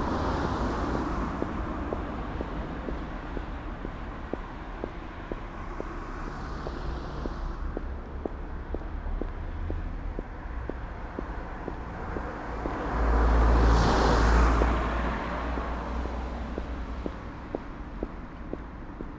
Valvasorjeva ulica, Maribor, Slovenia - walking
among steps and car fraffic